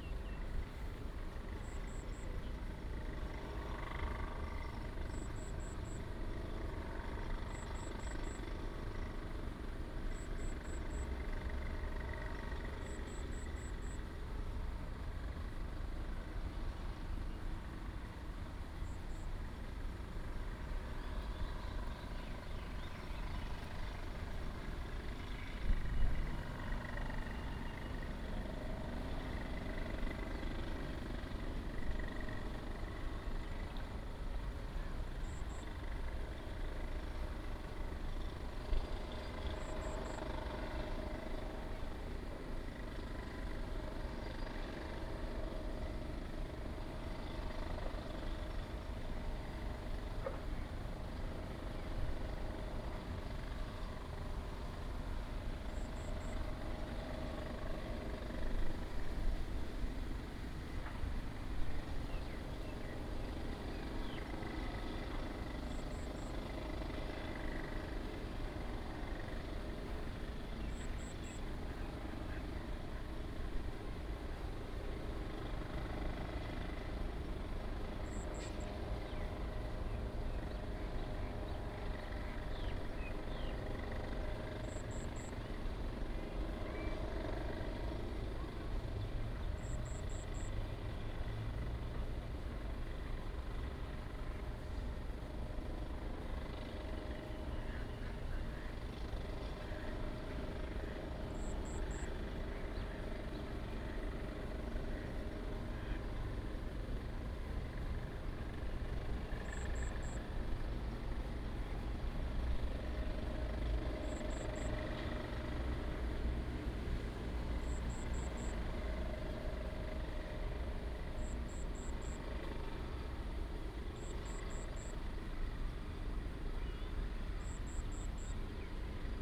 水圳森林公園, Zhubei City - construction sound
construction sound, Next to the pool, The voice of the ducks, sound of the birds
Hsinchu County, Taiwan, May 2017